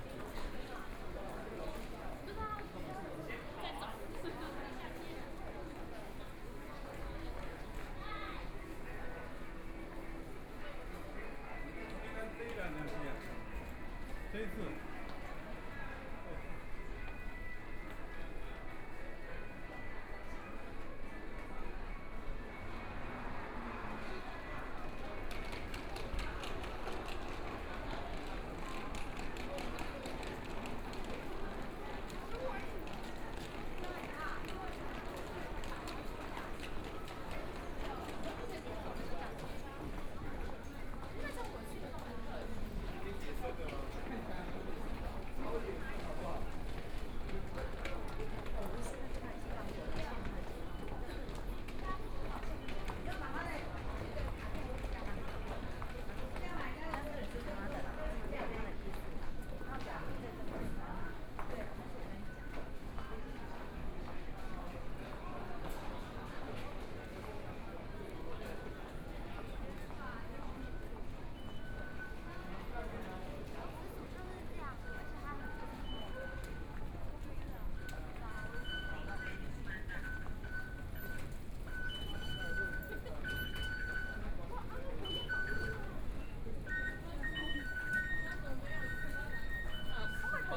Walking in the underground mall, Direction to MRT station, Clammy cloudy, Binaural recordings, Zoom H4n+ Soundman OKM II
10 February, 20:04